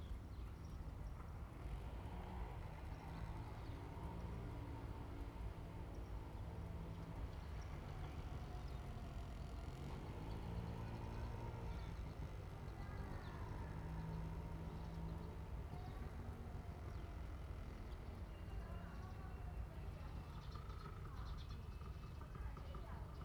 紅羅村, Huxi Township - in front of the temple
In the square, in front of the temple, Birds singing, Small village
Zoom H2n MS +XY
21 October 2014, ~18:00